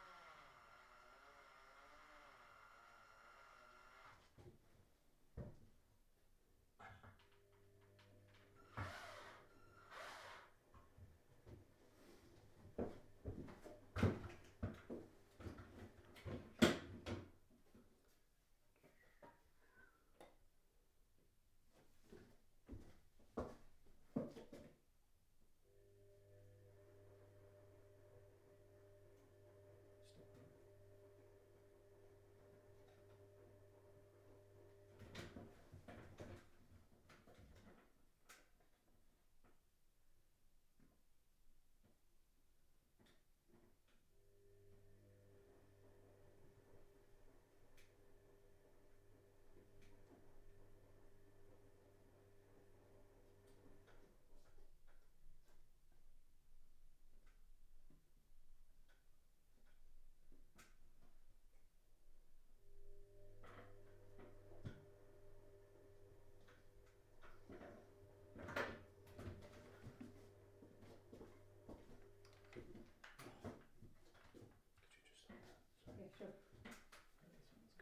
My Dining Room, Reading, UK - DIY and washing machine
We have recently been redecorating our dining room to make more space for our work things and to make it a calmer colour. I wanted us to put up a lot of shelves and the only way to mount the batons is to drill through the old plaster into the brick walls; the bricks are very strong and so we need to use the hammer drill to get into them. In this recording you can hear Mark and then me doing stints of drilling, getting up and down off the ladder, and picking screws and rawlplugs off the mantelpiece. In the background, our washing machine is churning away. A very productive morning.